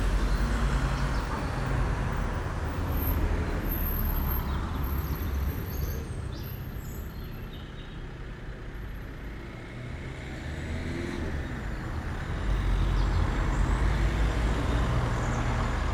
Rue Alcide dOrbigny, La Rochelle, France - P@ysage Sonore - Landscape - La Rochelle COVID 9 am jogging with bell tower
at 1'53 : 9 am jogging with bell tower, and frog and avifauna Jardin des Plantes
4 x DPA 4022 dans 2 x CINELA COSI & rycote ORTF . Mix 2000 AETA . edirol R4pro